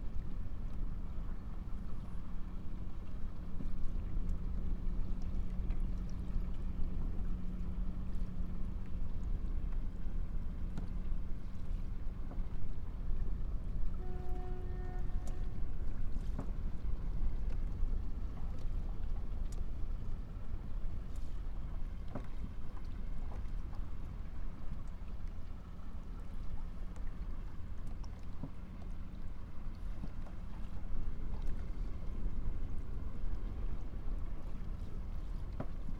ferry jetty in Portland Harbour (UK) - ferry jetty in Portland Harbour